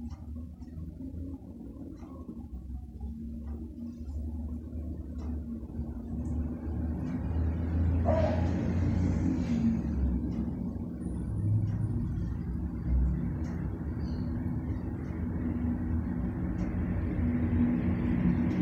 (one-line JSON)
{"title": "Cl. 53b Sur, Bogotá, Colombia - Interior apartamento bosa chicala", "date": "2021-11-21 07:22:00", "description": "Recorded in the morning, with a cellphone in the interior of an apartment, everything seems in general as if you were on a fishbowl, something usual when you live on the first floor in the middle of other apartments. Still, you can hear the characteristic sound of airplanes, dogs, people, and cars.", "latitude": "4.63", "longitude": "-74.18", "altitude": "2546", "timezone": "America/Bogota"}